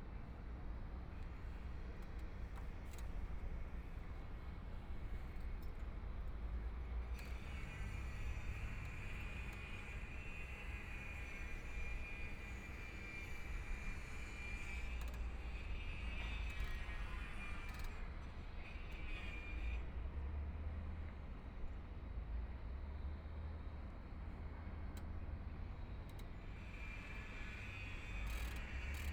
Standing on the top floor of the museum platform, Construction site sounds, There are many boats traveling the river by, Binaural recording, Zoom H6+ Soundman OKM II